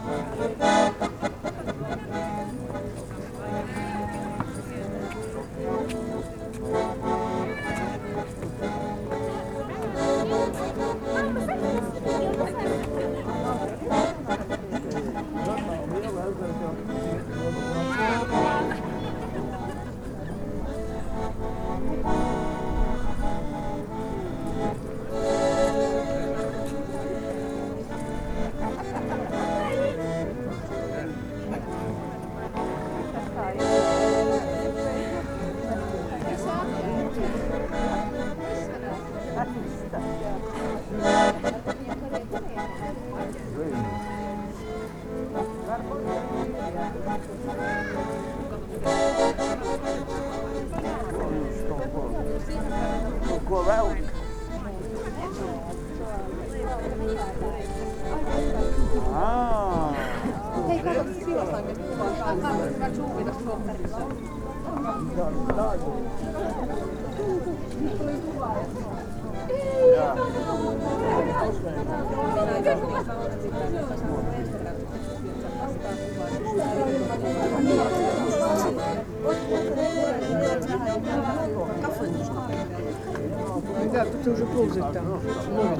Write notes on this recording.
autumn, still there are a lot of people in the central street